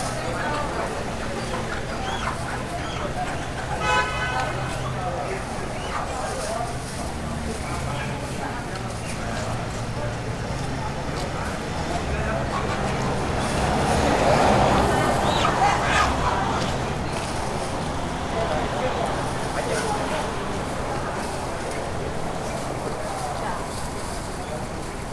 El Dorado, Panamá, Panama - Ambiente mercado chino, domingos
Todos los domingos se crea un mini mercado Chino donde casi todos los Chinos se suplen de alimentos frescos, gallinas vivas, patos vivos, legumbres etc...
19 March 2016, 06:35